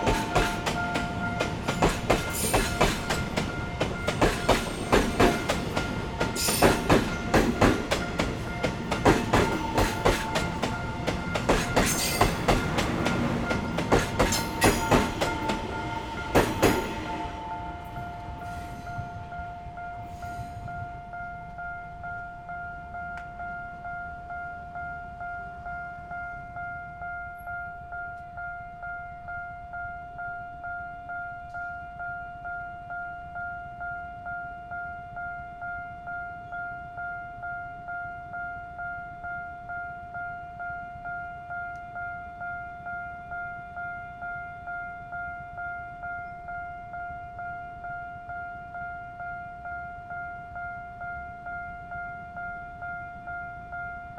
Near the railroad tracks, Trains traveling through, Traffic Sound, Railway level crossing
Zoom H6 MS+ Rode NT4
Yilan County, Taiwan, 26 July 2014, 18:54